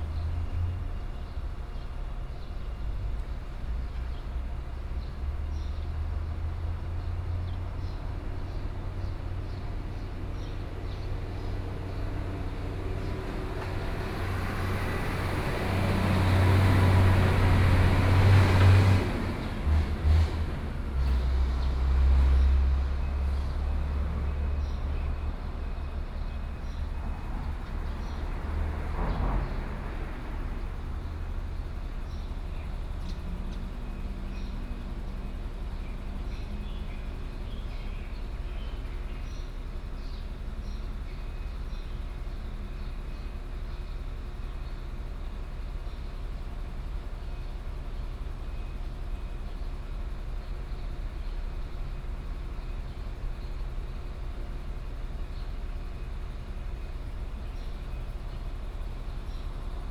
Dongcheng Rd., Dongshan Township - Under the railway track
Under the railway track, Traffic Sound, Birdsong sound, Trains traveling through, Hot weather
Yilan County, Taiwan, July 2014